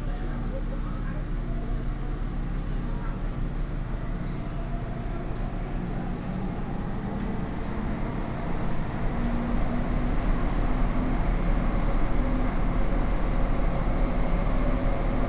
Xindian Line (Taipei Metro), New Taipei City - Xindian Line
from Dapinglin to Xindian District Office, Zoom H4n+ Soundman OKM II
New Taipei City, Taiwan, 28 June 2012, 14:59